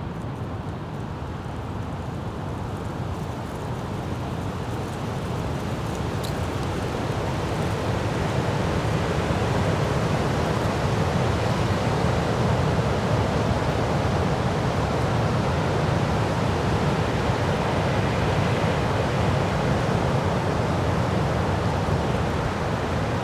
Piechowice, Poland - (888) Strong wind
Recording of a strong wind in the middle of the forest.
Recorded with DPA 4560 on Sound Devices MixPre-6 II.